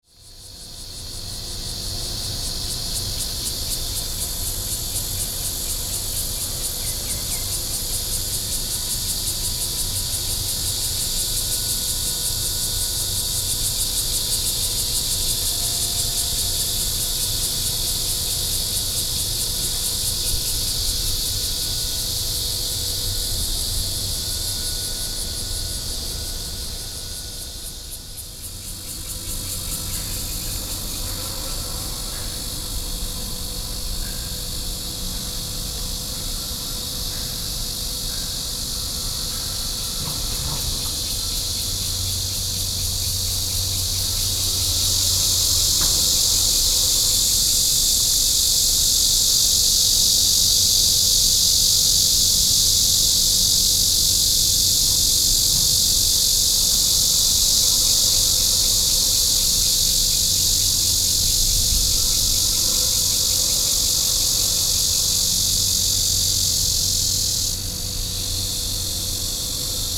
Ln., Museum Rd., Bali Dist. - In the parking lot
In the parking lot, Close factories, Cicadas cry, Hot weather
Sony PCM D50+ Soundman OKM II
Bali District, New Taipei City, Taiwan, July 2012